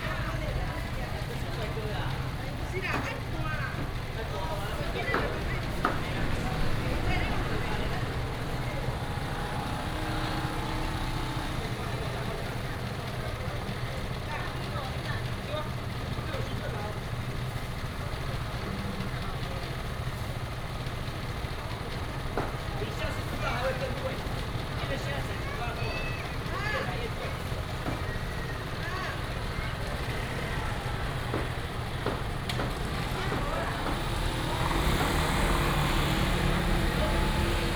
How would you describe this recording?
in the traditional market, Traffic sound